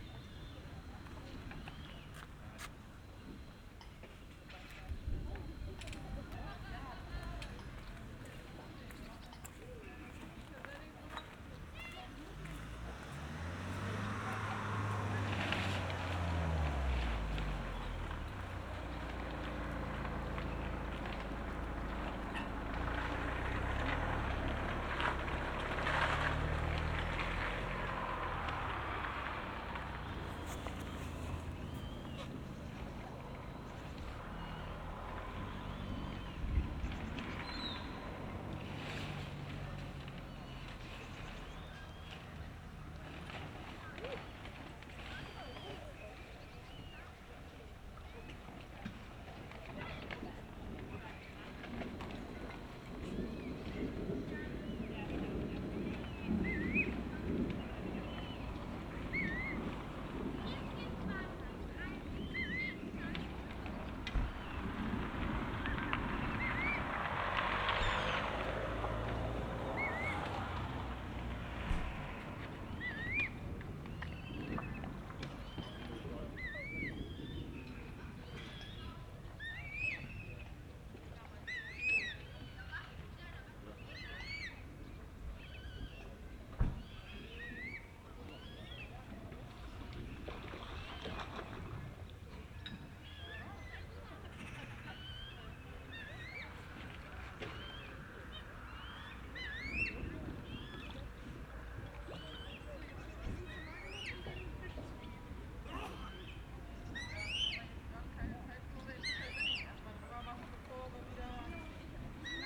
workum, het zool: marina, promenade - the city, the country & me: marina, promenade
approaching thunderstorm
the city, the country & me: august 4, 2012
Workum, The Netherlands